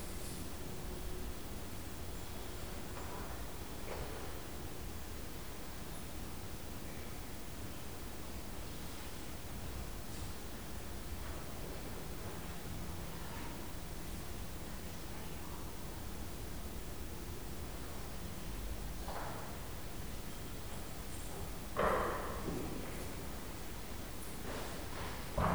St Mary's Parade, Lancaster, UK - Lancaster Priory
Meditation at Lancaster Priory. Recorded on a Tascam DR-40 with the on-board coincident pair of microphones. The gain is cranked right up, the Priory being very quiet with just movements of a member of the clergy preparing for the next service, the 9 o'clock bells and a visiting family towards the end of the recording.